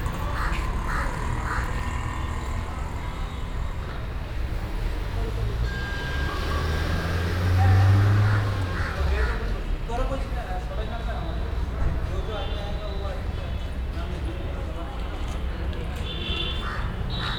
bangalor, karnataka, small street crossing
traffic at a small street crossing in the early afternoon
international city scapes - social ambiences, art spaces and topographic field recordings